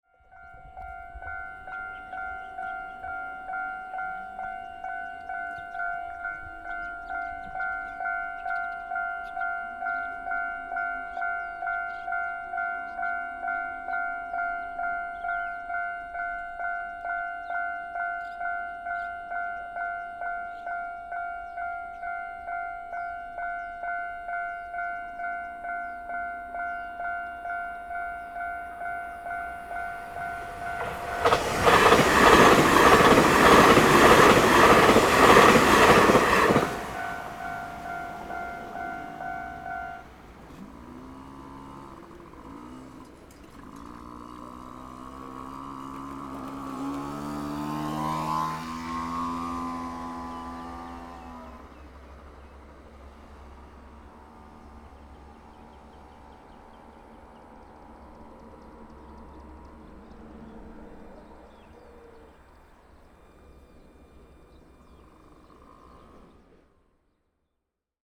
景美村, Sioulin Township - Train traveling through
In the railway level crossing, Train traveling through, The weather is very hot
Zoom H6 MS+Rode NT4